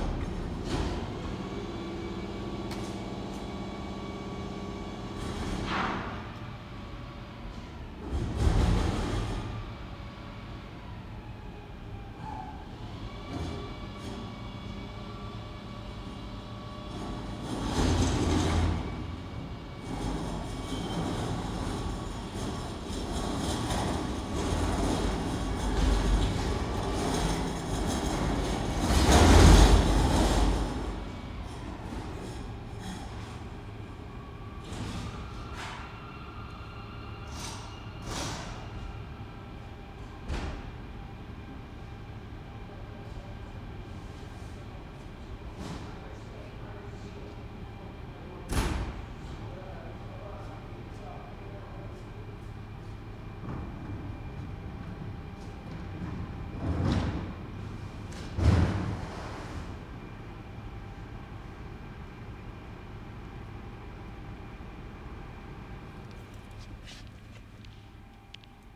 Kings Quarters Apartments, Bristol - Collecting bins from gentrifying flats
The day of bins collection has finally arrived in Kings Quarters Apartments. The walls shake every week of joy. Seagulls scream around as they can smell the future.
Stokes Croft, Bristol.
Recorded with Roland R26, pseudo SASS arrangement using foam with two omni Uši Pro.